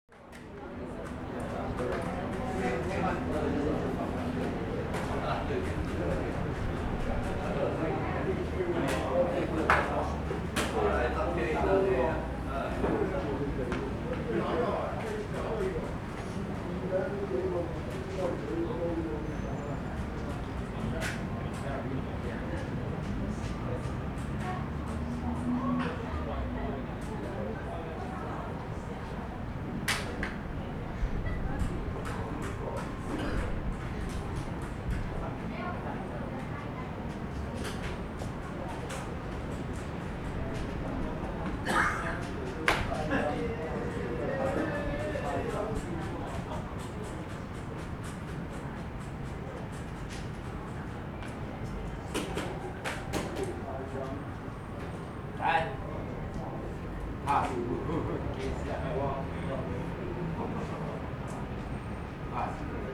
四號公園, Zhonghe Dist., New Taipei City - playing cards and chess
A group of middle-aged people playing cards and chess, In Park
Sony Hi-MD MZ-RH1 +Sony ECM-MS907